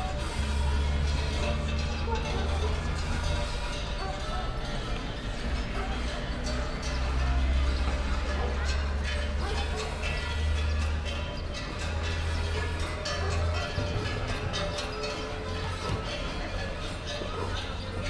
hill above Plaza el Descanso, Valparaíso - ambinence heard on hill above plaza
ambience on a small hill (called Pompeij) above Plaza el Descanso, gas truck, school (they've played a march in the yard), dogs, etc.
(Sony PCM D50, DPA4060)